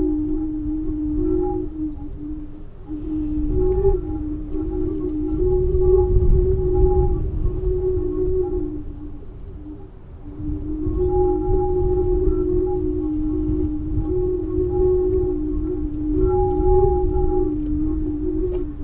Portesham, Dorset, UK - Singinggate
Recording of wind blowing through open ends of steel tubes in farm gate. SDRLP project funded by The Heritage Lottery Fund